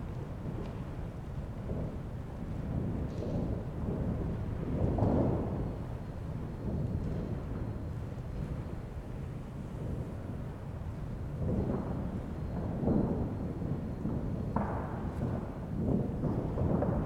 Teufelsberg, wind in the dome
abandoned listening station at Teufelsberg. Continuous wind decays the structure of the geodesic dome